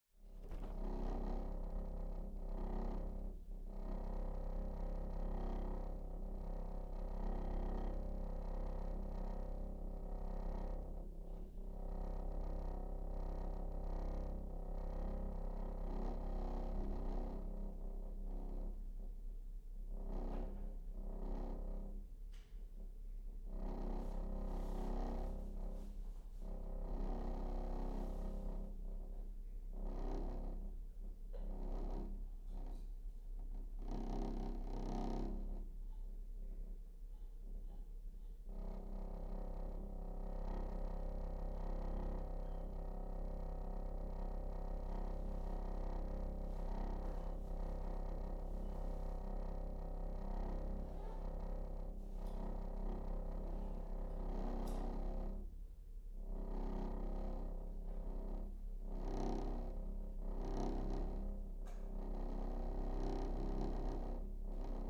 This is the sound of the thin glass windows in the old town hall being rattled by some building works or traffic outside. It interrupted all the way through an interview I was conducting in the space so I decided to give this sound a recording all of its own.